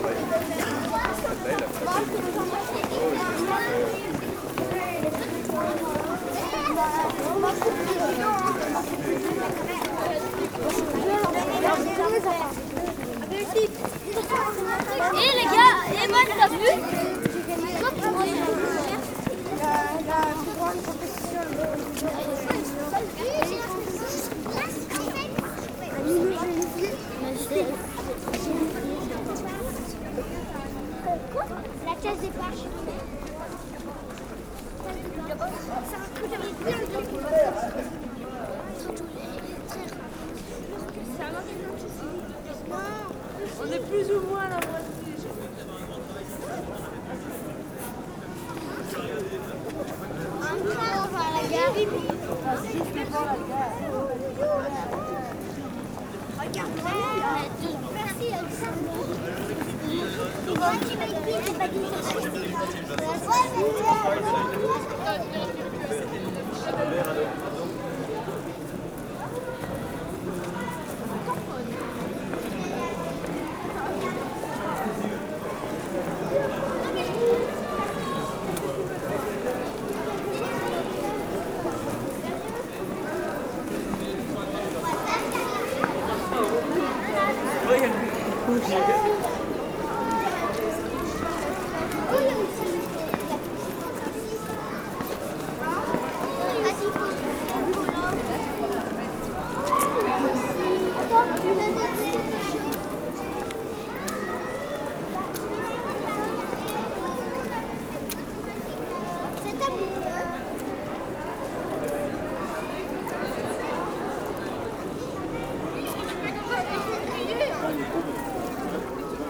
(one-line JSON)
{"title": "L'Hocaille, Ottignies-Louvain-la-Neuve, Belgique - Following children", "date": "2016-03-18 12:40:00", "description": "Following children, crossing the city during the lunch time.", "latitude": "50.67", "longitude": "4.61", "altitude": "121", "timezone": "Europe/Brussels"}